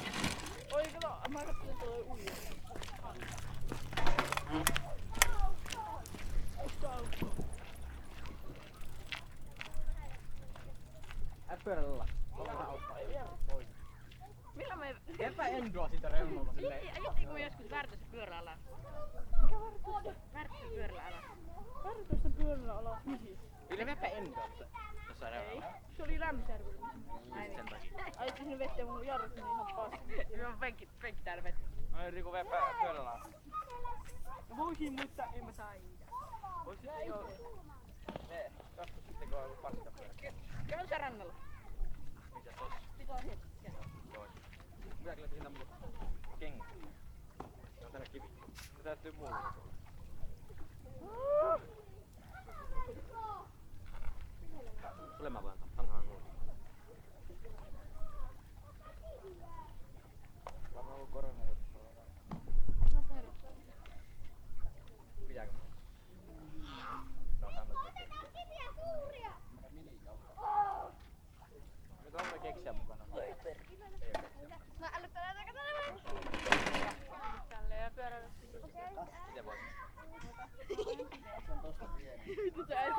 Nallikarin majakka, Oulu, Finland - Ambiance near the lighthouse of Nallikari on a warm summer day
People hanging around, cycling and skating around the lighthouse of Nallikari on the first proper summer weekend of 2020. Zoom H5 with default X/Y module.
24 May 2020, 17:11